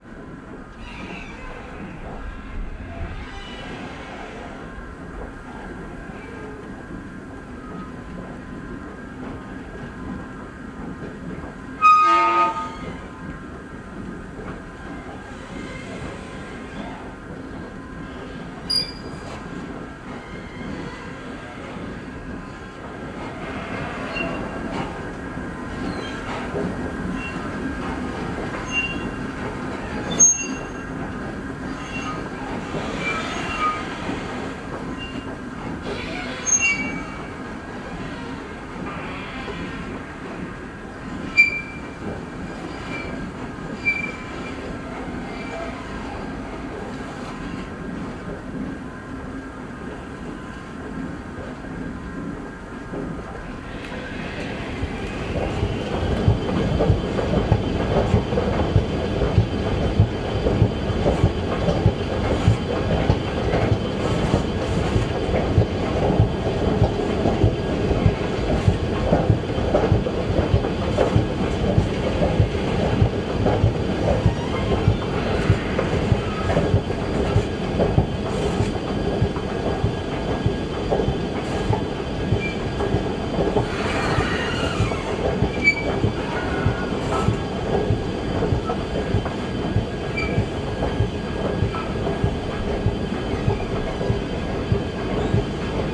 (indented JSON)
{
  "title": "Pr. Beatrixlaan, Den Haag, Netherlands - Escalator Squeaks at Night",
  "date": "2016-03-08 02:00:00",
  "description": "An escalator from street level to the elevated tramway squeaks and groans late at night. It continually moves regardless if anyone is present. Its beautiful song easily overlooked by commuters. I captured this recording late at night to avoid the interference of passing cars, attempting to capture purely the escalator's song.",
  "latitude": "52.08",
  "longitude": "4.33",
  "altitude": "2",
  "timezone": "Europe/Amsterdam"
}